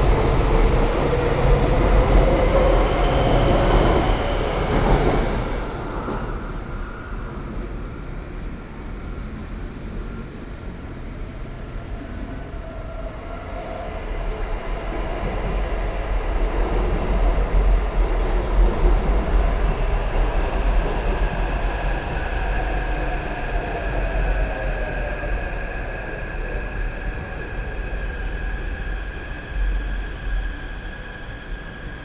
Qiyan Station, Taipei - Platform
Platform, Sony PCM D50 + Soundman OKM II
台北市 (Taipei City), 中華民國, 4 June 2013